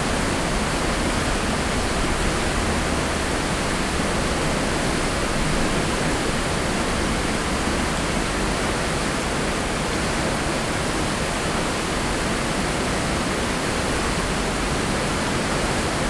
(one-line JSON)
{"title": "Mursteig, Graz, Austria - river Mur flow", "date": "2012-09-02 12:50:00", "description": "sound of river Mur near Mursteig pedestrian bridge. the river flows quick and wild at this place.\n(PCM D-50, DPA4060)", "latitude": "47.07", "longitude": "15.44", "altitude": "354", "timezone": "Europe/Vienna"}